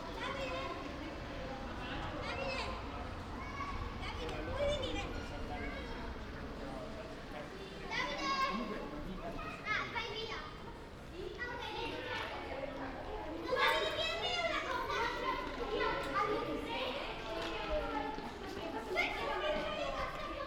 Ascolto il tuo cuore, città. I listen to your heart, city. Several chapters **SCROLL DOWN FOR ALL RECORDINGS** - “Posting postcards on May 1st at the time of covid19” Soundwalk
“Posting postcards on May 1st at the time of covid19” Soundwalk
Chapter LXII of Ascolto il tuo cuore, città. I listen to your heart, city.
Tuesday April 28th 2020. Walking to outdoor market and posting postcard, San Salvario district, fifty two days after emergency disposition due to the epidemic of COVID19.
Start at 11:23 a.m. end at h. 11:50 a.m. duration of recording 27’17”
The entire path is associated with a synchronized GPS track recorded in the (kml, gpx, kmz) files downloadable here: